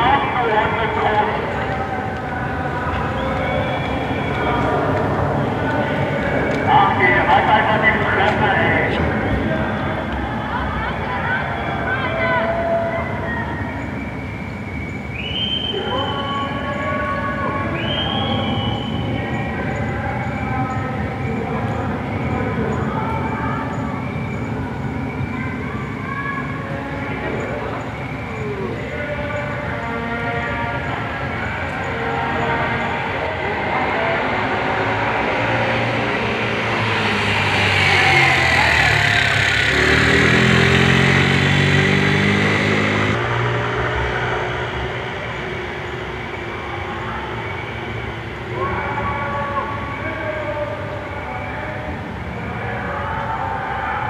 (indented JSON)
{
  "title": "Mitte, Berlin, Germany - nachbarn buhen baergida aus",
  "date": "2016-09-26 21:13:00",
  "description": "von ca. 50 polizist/inn/en begleitet, werden ca. drei dutzend baergida demonstrant/inn/en von nachbar/inne/n ausgebuht. //neighbours booing at a few dozens of right-wing baergida-demonstrators.",
  "latitude": "52.54",
  "longitude": "13.38",
  "altitude": "42",
  "timezone": "Europe/Berlin"
}